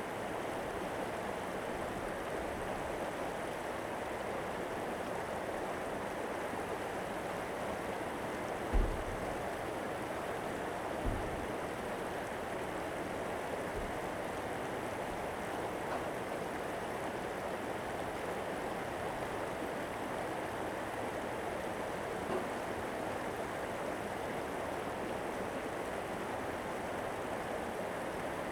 瑞美村, Rueisuei Township - Stream
On the embankment, Stream, The sound of water
Zoom H2n MS+ XY
Rueisuei Township, 河堤便道